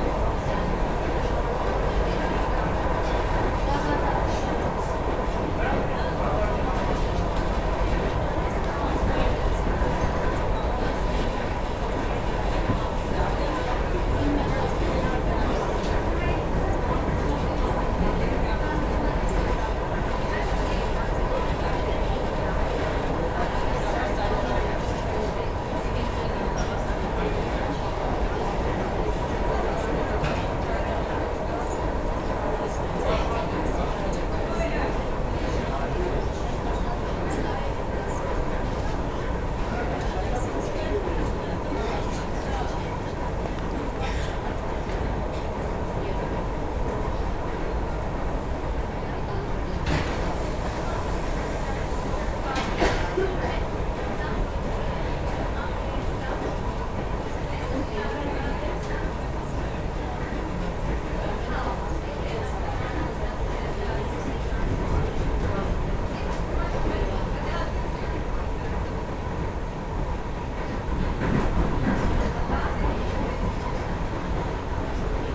Norshen, Arménie - The train in Armenia, between Gyumri to Norshen
A trip made in train between Gyumri station to Erevan station. The train in Armenia is old and absolutely not reliable ; the marshrutni minibuses are faster and better. It was an interesting manner to travel to see how it works into an Armenian train. It's slow and uncomfortable. People are incoming, and after 8 minutes, the travel begins. Whole transport to Erevan need 3 hours. This recording stops in Norshen.